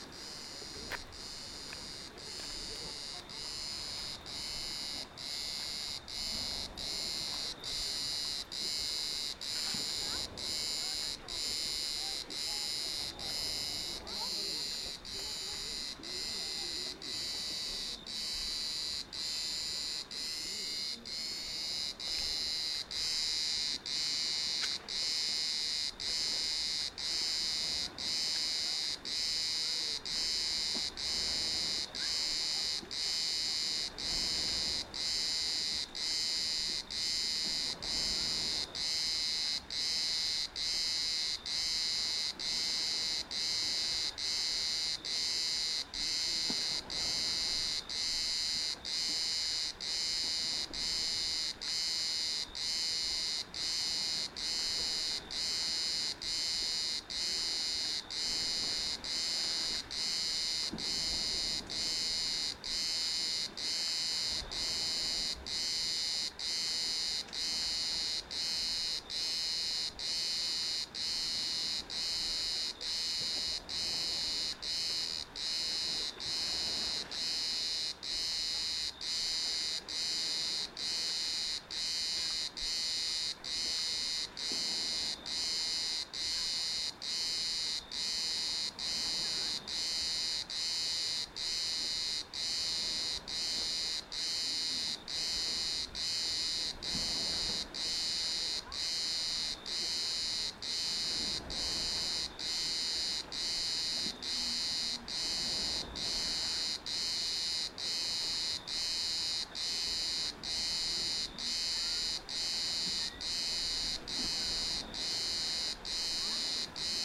Karya Beach Camp, night time, cicada sounds
Unnamed Road, Menteşe/Muğla, Turkey, 31 July, ~23:00